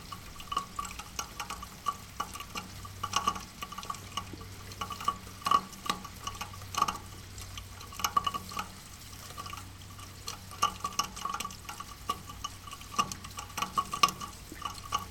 backyard - backyard, rain drops in eaves gutter
quiet sunday, it's raining, rain drops falling down the eaves gutter, playing the sound of this afternoon. 10.08.2008 17:00
berlin